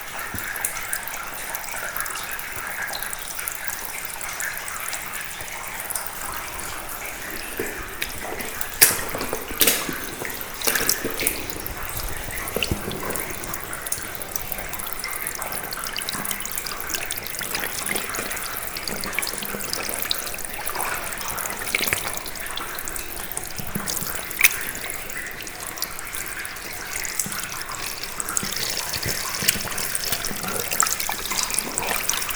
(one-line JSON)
{"title": "Audun-le-Tiche, France - Magéry stairs", "date": "2016-08-20 09:50:00", "description": "The Magéry staircase is a very exhausting stairway descending into the deep mine. This stairway was used by russian prisoners during the World War II, under the german constraint. Russian prisoners, essentially women, were descending into the mine, in aim to work there. It was extremely difficult for them. This recording is when I climb the stairs.", "latitude": "49.47", "longitude": "5.96", "altitude": "333", "timezone": "Europe/Paris"}